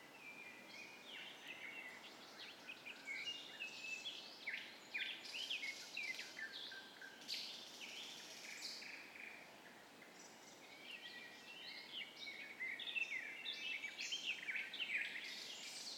ул. Беринга, корпус, Санкт-Петербург, Россия - Smolensk Orthodox cemetery
5.30 am. June 2015. Birds sing in the cemetery
корпус, Sankt-Peterburg, Russia, 25 June 2015